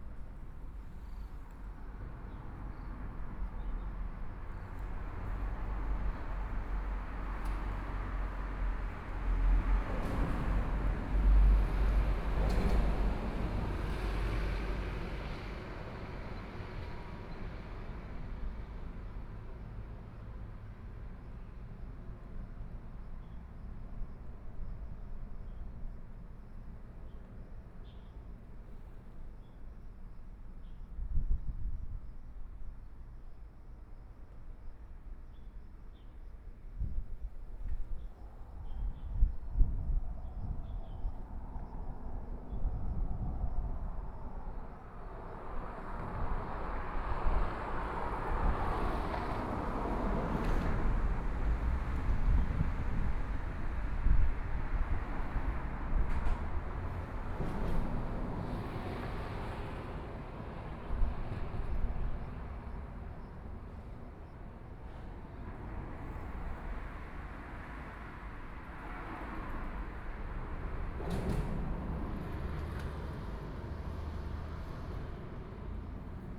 五結鄉鎮安村, Yilan County - Below the railroad tracks
Below the railroad tracks, Hot weather, Traffic Sound
Sony PCM D50+ Soundman OKM II
Wujie Township, 五結堤防道路, 27 July 2014